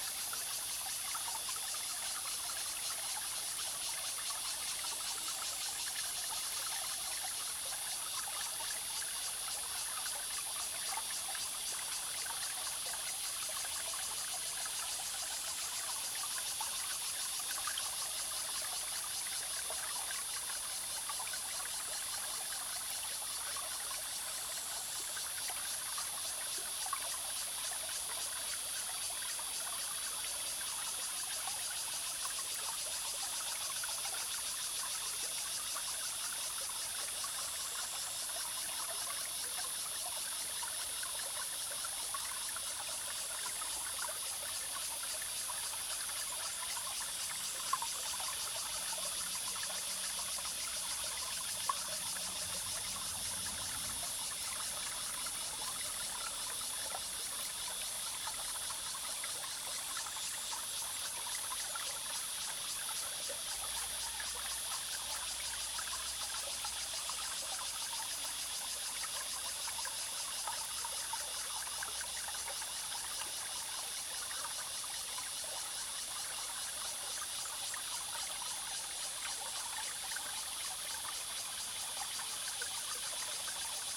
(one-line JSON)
{"title": "Zhonggua Rd., Puli Township - stream and Cicada sounds", "date": "2016-07-27 12:26:00", "description": "The sound of the stream, Cicada sounds\nZoom H2n MS+XY +Spatial audio", "latitude": "23.96", "longitude": "120.89", "altitude": "454", "timezone": "Asia/Taipei"}